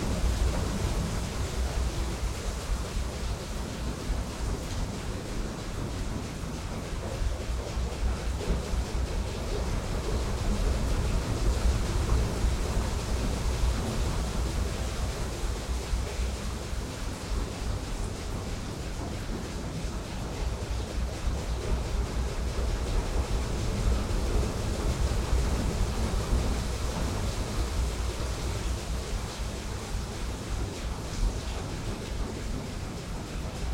northville, mi, waterwheel
northville, michigan waterwheel at historic ford valve plant
Northville, MI, USA, 18 July 2011